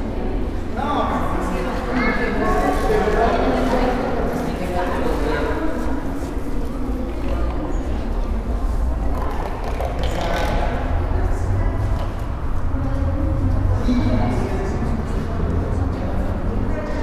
Blvrd Jose María Morelos, Granjas el Palote, León, Gto., Mexico - Agencia de carros BMW Euromotors León.
Car agency BMW Euromotors León.
I made this recording on april 23rd, 2022, at 2:22 p.m.
I used a Tascam DR-05X with its built-in microphones and a Tascam WS-11 windshield.
Original Recording:
Type: Stereo
Esta grabación la hice el 23 de abril de 2022 a las 14:22 horas.
23 April 2022, 14:22